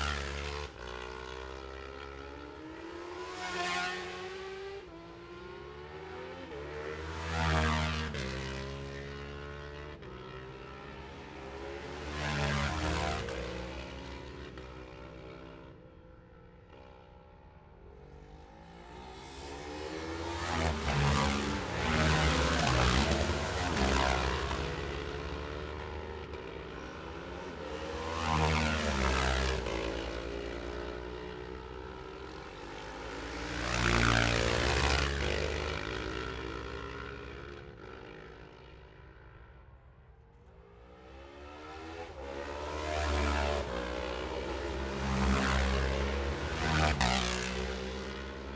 Jacksons Ln, Scarborough, UK - olivers mount road racing ... 2021 ...
bob smith spring cup ... twins group B ... dpa 4060s to MixPre3 ...
22 May 2021